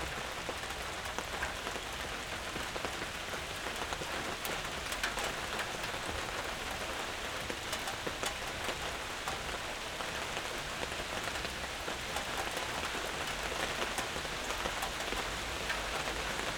Mestni park, Slovenia - public toilet, rain on roof and umbrella